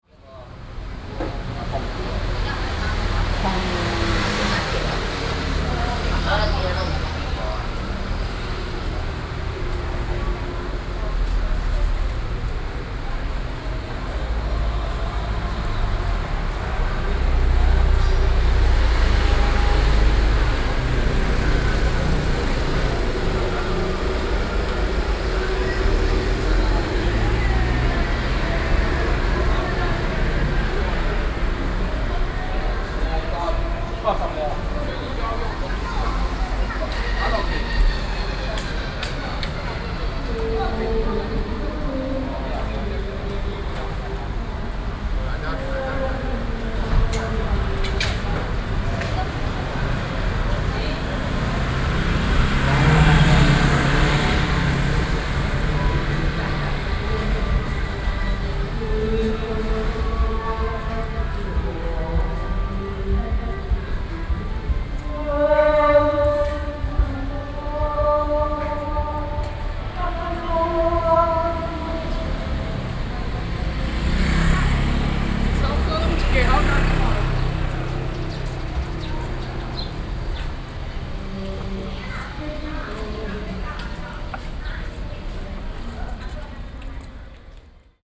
Zhongshan District, Keelung - soundwalk
Walking through the old mall, Sony PCM D50 + Soundman OKM II
台北市 (Taipei City), 中華民國, 24 June, 18:00